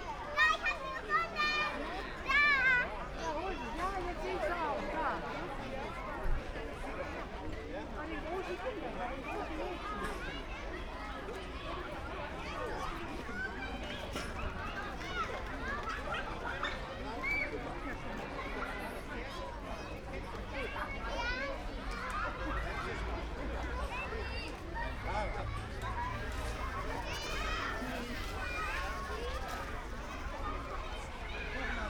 Playground, Wallschule, Peterstrasse, Oldenburg, Deutschland - Sommerfest
late afternoon, nice warm spring day, the Sommerfest ends, kids and parents leaving
(Sony PCM D50, Primo EM172)